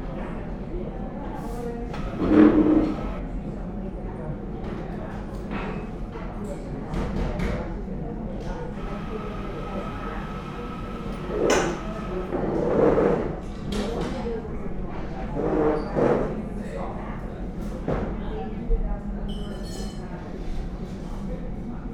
Sound Walk Malvern Shopping Area.
A short sound walk through a busy shopping area with road works into a coffe shop.
MixPre 6 II with 2 Sennheiser MKH 8020s.
England, United Kingdom, 10 August 2022, 13:10